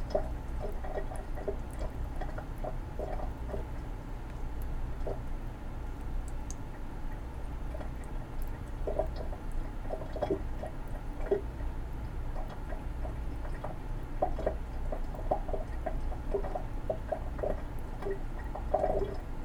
6125 Habitat dr. - Leaky Drain
A leaky sink drain.